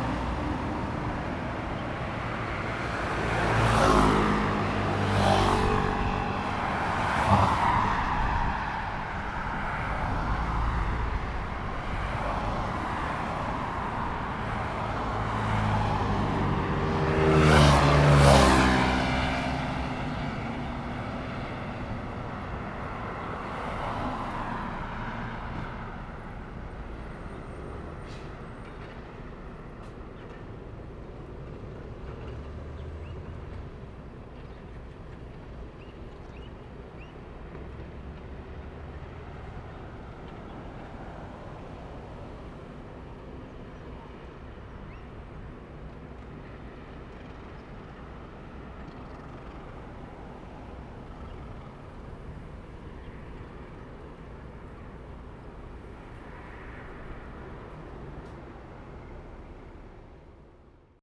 Binnan Rd., 台南市南區喜北里 - Traffic and birds sound
Traffic and birds sound
Zoom H2n MS+ XY